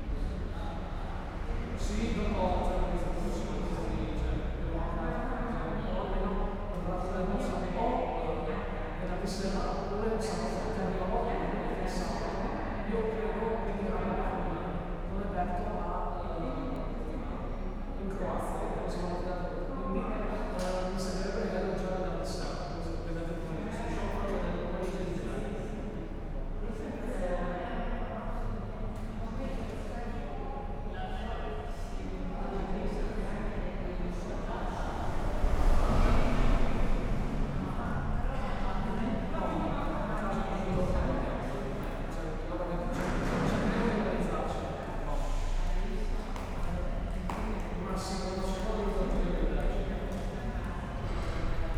bus station, Muggia, Trieste, Italy - station hall ambience
Muggia, bus station, waiting for a lift to Lazaretto. station hall ambience, people talking, cafe is closed.
(SD702, DPA4060)